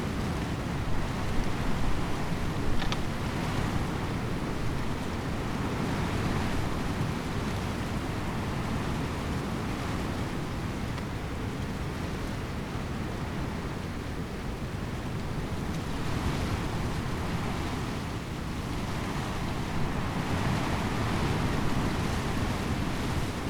warns, skarl: reaklif - the city, the country & me: elder tree in the wind
stormy day (force 7) at the monument on the red cliffs, which reminds the battle of warns in 1345
wind blown elder tree
the city, the country & me: june 24, 2013
Warns, The Netherlands, 24 June